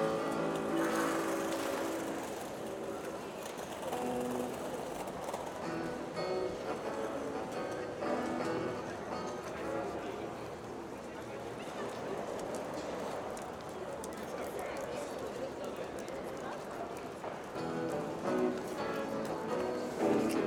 Дворцовая пл., Санкт-Петербург, Россия - Street musician and advertising Petersburg